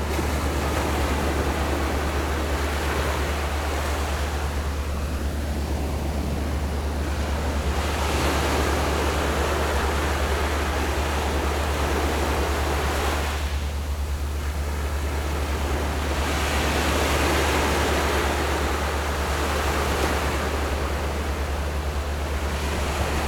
五結鄉季新村, Yilan County - Sound of the waves
Hot weather, In the beach, Sound of the waves
Zoom H6 MS+ Rode NT4